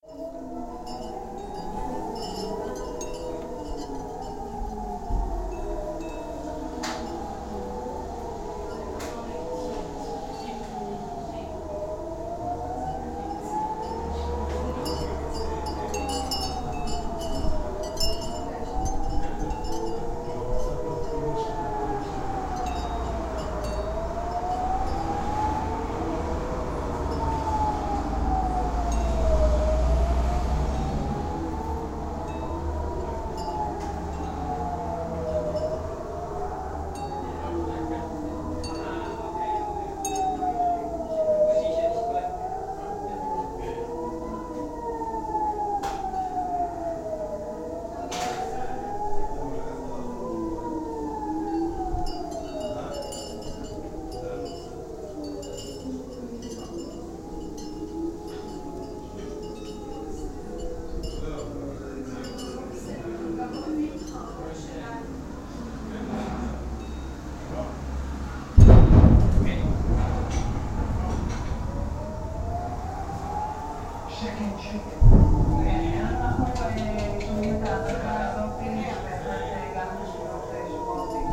Haifa, Israel - Haifa bombed by Hizbullah
Recording in Haifa from the balcony on a summer's day of war sirens and bombs launched from the Hizbullah in Lebanon